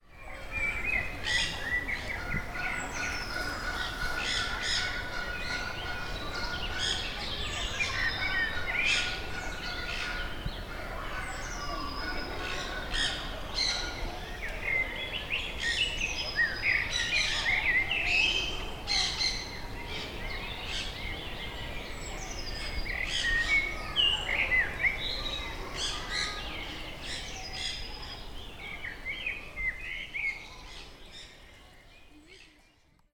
local and exotic birds vocalisations, just behind the zoological gardens fence
14 May 2011, 11:45